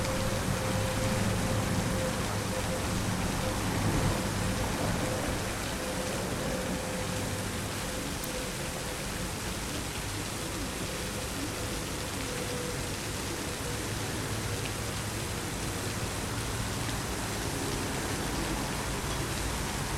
zürich 2 - enge, am gottfried-keller-denkmal
enge, am gottfried-keller-denkmal